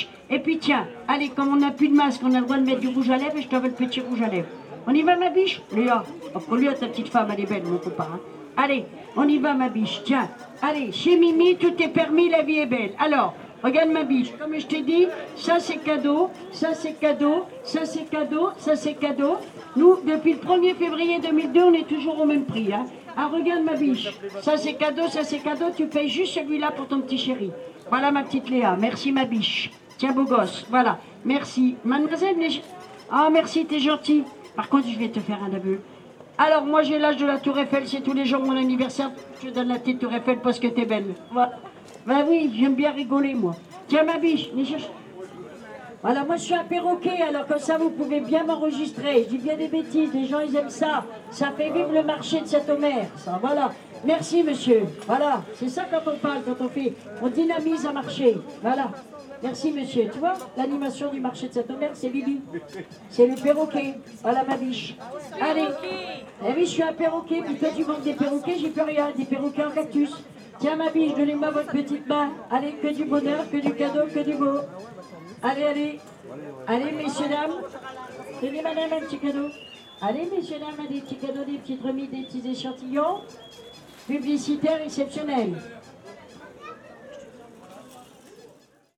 Pl. du Maréchal Foch, Saint-Omer, France - Marché de St-Omer
St-Omer
Ambiance du marché
La vendeuse de parfums (et ses nombreux cadeaux...)